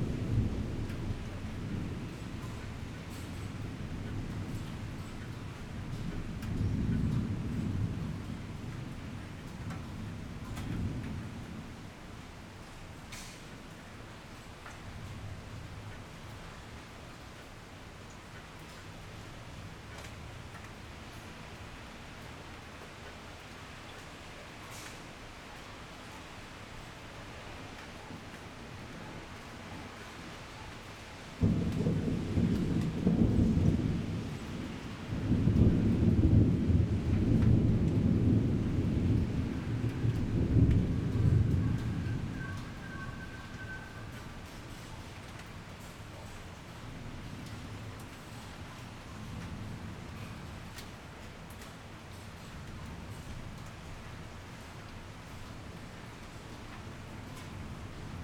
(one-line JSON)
{"title": "Rende 2nd Rd., Bade Dist. - Thunderstorms", "date": "2017-07-11 15:10:00", "description": "Thunderstorms\nZoom H6", "latitude": "24.94", "longitude": "121.29", "altitude": "141", "timezone": "Asia/Taipei"}